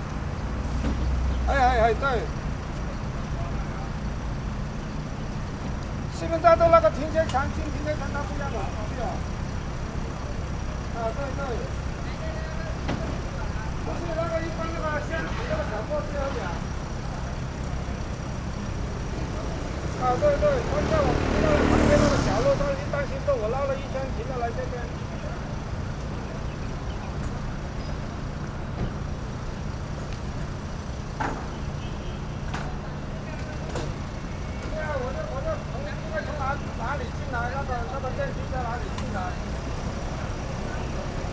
Taipei, Taiwan - Discharge
Discharge, Sony PCM D50